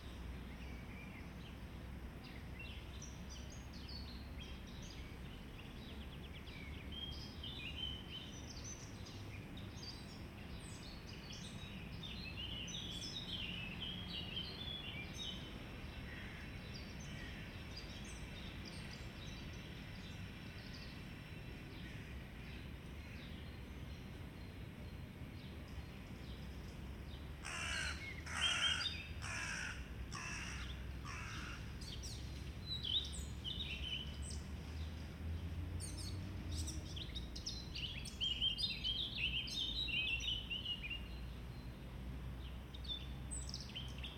Ogród Saski, Warszawa, Polska - A grove in the Saxon Garden
A groven in the Saxon Garden in Warsaw - chirping birds - starlings - crows - distant cars and trams - distant people talking
Recording made with Zoom H3-VR, converted to binaural sound